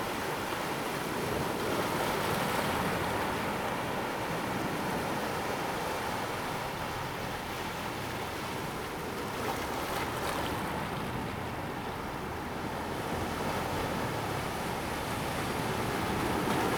尚海灘, Zhubei City, Hsinchu County - beach
in the beach, Sound of the waves, High tide time
Zoom H2n MS+XY
Zhubei City, Hsinchu County, Taiwan, 26 August 2017